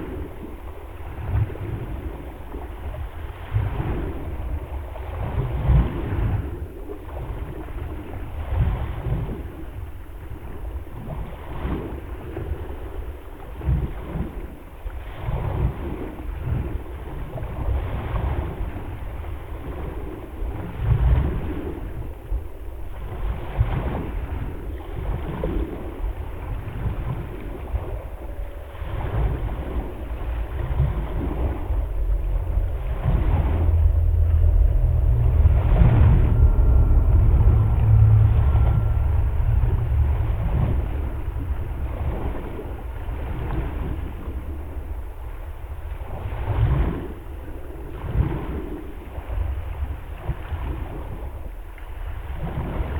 {"title": "Jūrmala, Latvia, hydrophone in the sand", "date": "2020-07-21 10:20:00", "description": "hydrophone in the beach's sand, near seashore", "latitude": "56.97", "longitude": "23.77", "timezone": "Europe/Riga"}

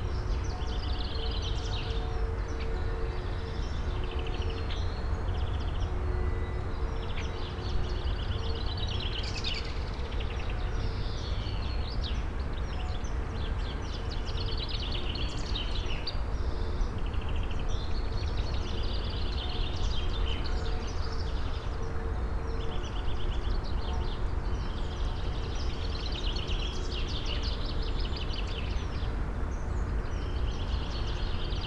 {"title": "drava areas, melje - sunday noon, birds, church bells", "date": "2014-03-16 11:59:00", "description": "river side ambience", "latitude": "46.55", "longitude": "15.69", "timezone": "Europe/Ljubljana"}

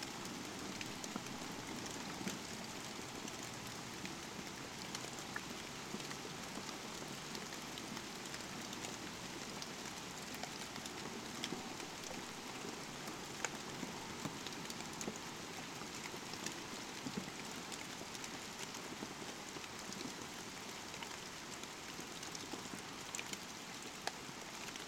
{"title": "Vyžuonos, Lithuania, abandoned warehouse, rain", "date": "2019-09-13 16:20:00", "description": "abandoned, half ruined warehouse. it's raining. microphones near the roof", "latitude": "55.57", "longitude": "25.50", "altitude": "93", "timezone": "Europe/Vilnius"}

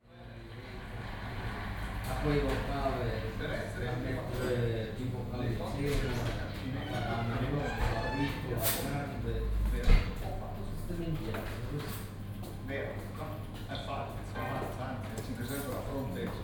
{"title": "koeln, luebecker str, italian bar - early evening bar ambience", "date": "2009-05-06 19:00:00", "description": "6.5.2009, 19:00 dinner time at trattoria celentano, italian game show on TV, kitchen sounds", "latitude": "50.95", "longitude": "6.95", "altitude": "53", "timezone": "Europe/Berlin"}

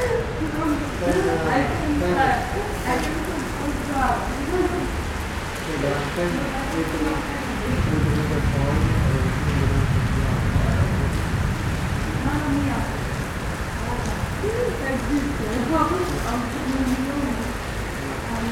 {"title": "Library, Nova Gorica, Slovenia - People gathering infront the the Library", "date": "2017-06-06 17:05:00", "description": "People gathering in front the covered entrance of the Library at the beginning of the rain.", "latitude": "45.96", "longitude": "13.65", "altitude": "100", "timezone": "Europe/Ljubljana"}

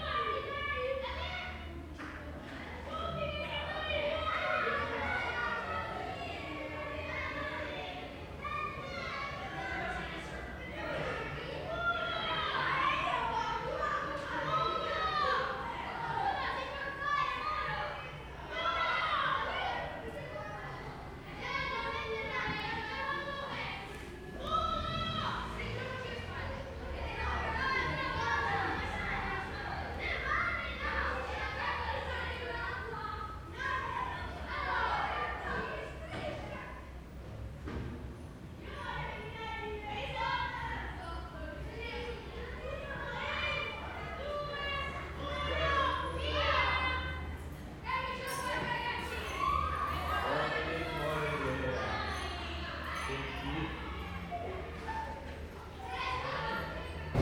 {"title": "Perugia, Italien - Piazza del Duca/Via del Carmine - Children playing in the alleyways, passers-by", "date": "2013-09-25 18:19:00", "description": "Piazza del Duca/Via del Carmine - Children playing in the alleyways, passers-by.\n[Hi-MD-recorder Sony MZ-NH900 with external microphone Beyerdynamic MCE 82]", "latitude": "43.11", "longitude": "12.39", "altitude": "451", "timezone": "Europe/Rome"}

